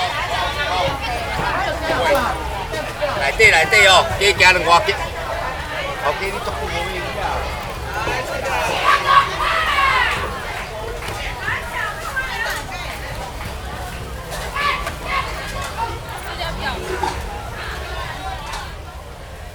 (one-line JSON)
{"title": "Shilin, Taipei - Traditional markets", "date": "2011-11-19 10:34:00", "description": "walking in the Traditional markets, Rode NT4+Zoom H4n", "latitude": "25.09", "longitude": "121.51", "altitude": "8", "timezone": "Asia/Taipei"}